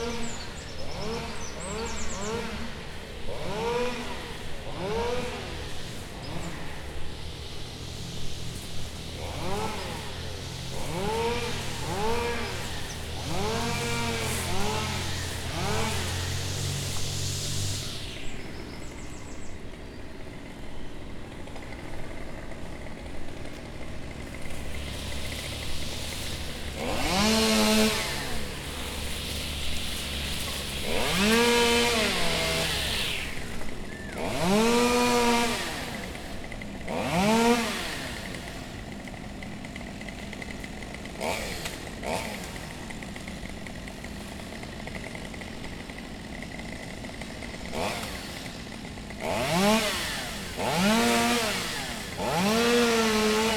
Lisbon, Alvalade, cutting trees
Primary_School, cutting_trees, chainsaw, plane
Lisbon, Portugal